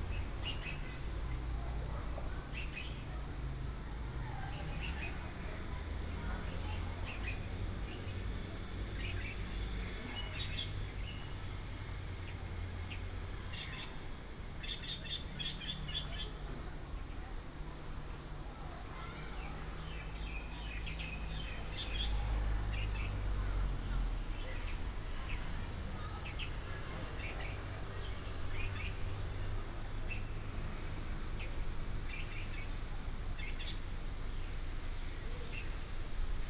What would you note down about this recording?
Under the big banyan tree, wind and Leaves, Bird, Binaural recordings, Sony PCM D100+ Soundman OKM II